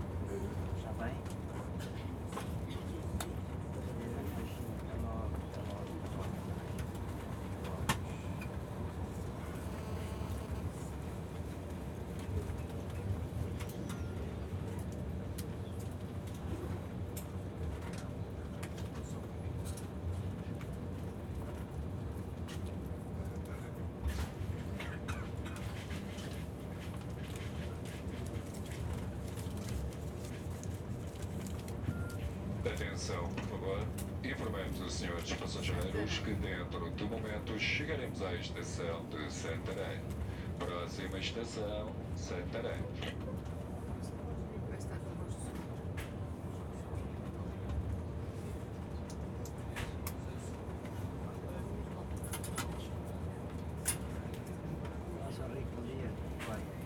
Almeirim, on the train to Porto - ticket inspection
ticket inspector going along the carriage, clipping tickets, talking to some of the passengers, announcing incoming station. train stops for a brief moment at the station.